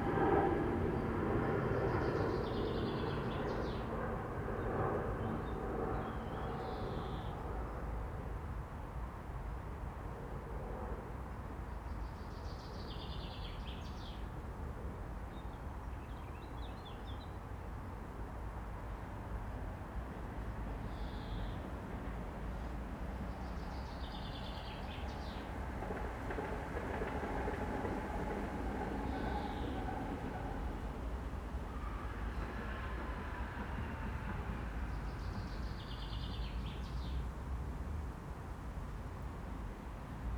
The road beside the old abandoned ice factory is now part of a cycle route, so people on bikes, rollerblades and scooters pass by heard against the constant background of traffic that dominates the area. There is a distant chaffinch singing. A plane and electric-car co-incide towards the end of the recording, the aircraft totally drowning out the sound of the car.
Praha, Česko, 14 June 2022